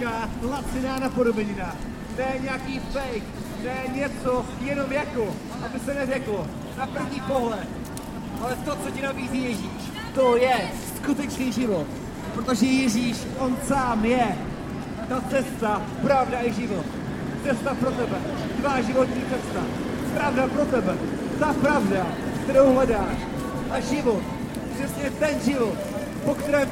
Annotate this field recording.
performance in front of shopping moll of a preacher and a musician